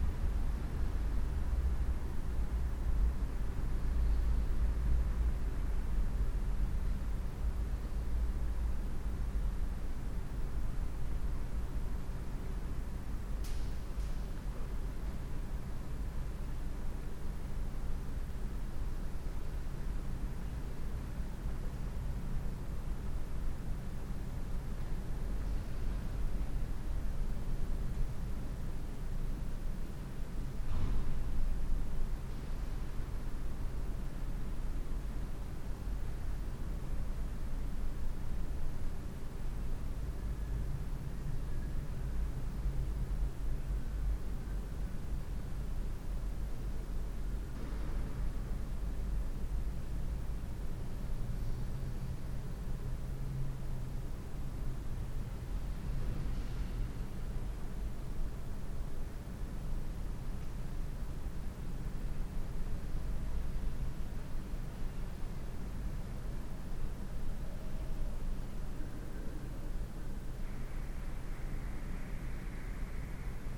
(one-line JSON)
{"title": "cologne, inside minoriten kirche, silence", "date": "2009-09-29 18:01:00", "description": "inside the church in the afternoon - silence surrounded by the dense city traffic noise\nsocial ambiences/ listen to the people - in & outdoor nearfield recordings", "latitude": "50.94", "longitude": "6.95", "altitude": "59", "timezone": "Europe/Berlin"}